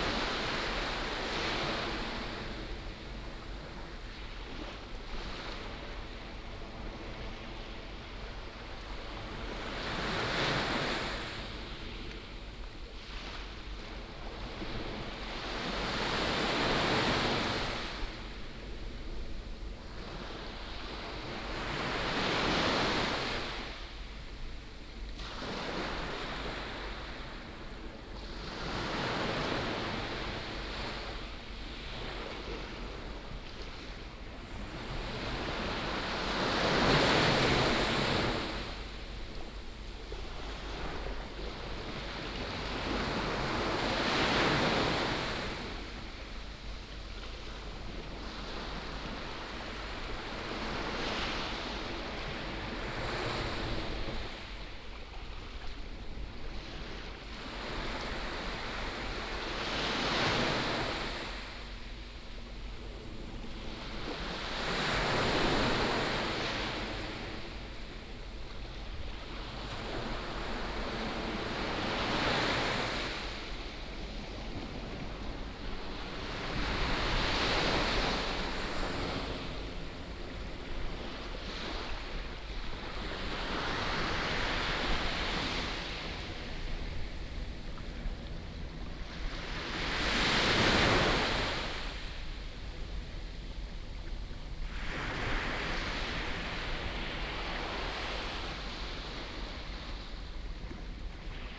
Liukuaicuo Harbor, Tamsui Dist. - At the beach
next to Small fishing port, Sound of the waves, At the beach
New Taipei City, Tamsui District, 16 April 2016